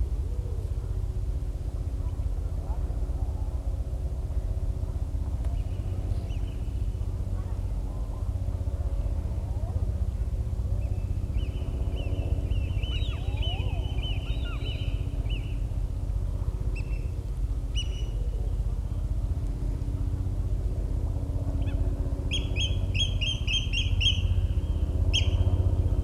At this jagged promontory in the stunning Northern Ireland coastline the cliffs and bare rocks form a natural amphitheater that gives the soundscape a reverberant quality it would not have in the open. On this unbelievably warm, calm day it creates a very special atmosphere. Gulls, eider ducks, oystercatchers, rock pipits, cormorants and people all contribute. The distant shouts are an extreme sports group (Aquaholics) that leap off cliffs into the sea below. The rather sinister bass is a helicopter for wealthy tourists to see the view from above. They regularly fly over but even when on the ground the drone, 5km away, is constant and never stops.